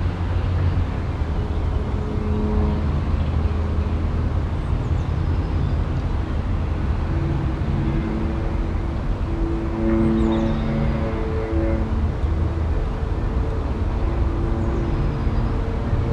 heiligenhaus, am alten steinbruch
flughimmel und vogelstimmen im talecho des alten steinbruchs, morgens - dazu das lärmen von zweitaktern und einem ferngesteuerten modellflugzeug
project: :resonanzen - neanderland - soundmap nrw: social ambiences/ listen to the people - in & outdoor nearfield recordings, listen to the people